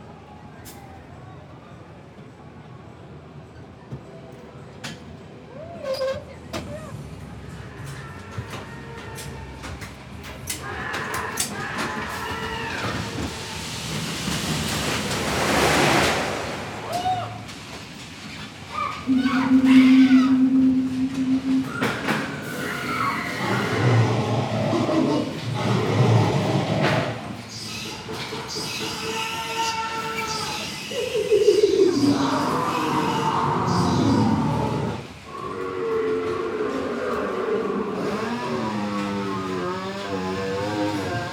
Temple of Doom, Berlin Alexanderplatz - Rollercoaster ride through the temple of doom at fun fair
A complete sound ride on a rollercoaster inside the temple of doom at the christmas market's fun fair. Creatures to scare visitors.
Recorded device: Sony PCM-D100, handheld, with windjammer. XY at 90°.
Berlin, Germany, 17 December 2015